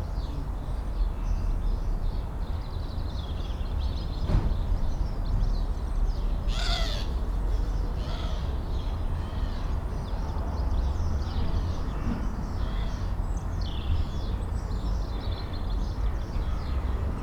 Church St, Kirkbymoorside, York, UK - inside church porch ... waiting for nine o clock ...
inside church porch ... waiting for nine o'clock ... All Saints Church ... lavalier mics clipped to sandwich box ... the church clocks strikes nine at 05:12 ... bird calls ... song from ... dunnock ... starling ... blue tit ... collared dove ... blackbird ... goldfinch ... crow ... house sparrow ... robin ... wood pigeon ... jackdaw ... background noise ...